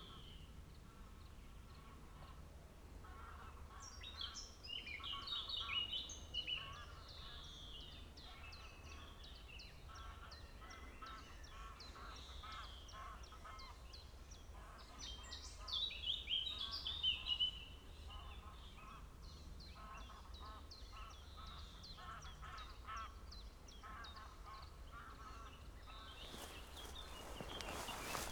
Strassen/Havel, Wesenberg, Deutschland - walking /w birds
walking around the lock at river Havel and nearby areas, listening to birds
(Sony PCM D50, Primo EM172)